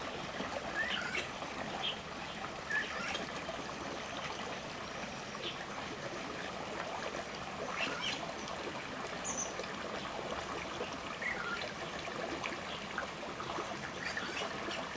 中路坑溪, 桃米里 Puli Township - Bird and Stream
Bird sounds, Stream
Zoom H2n MS+XY
Nantou County, Taiwan, 16 September